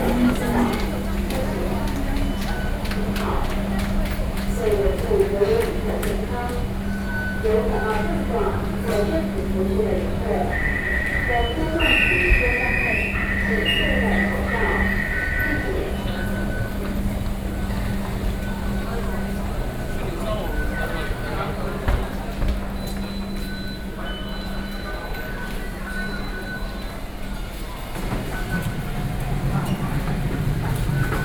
Taipei, Taiwan - At MRT stations
31 October 2012, ~21:00